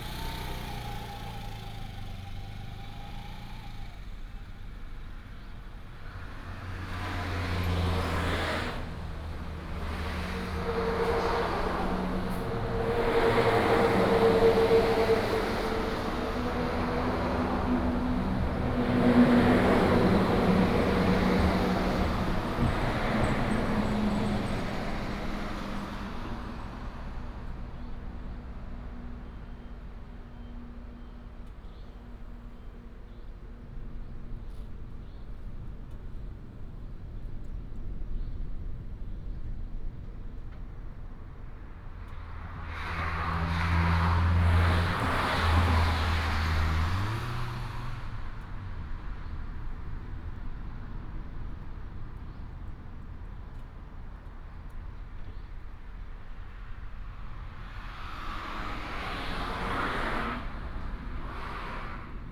全家大竹店, Dawu Township - Traffic the waves sound
Shop by the highway, Traffic sound, Sound of the waves, Bird cry, Gecko call
Taitung County, Taiwan, 2 April 2018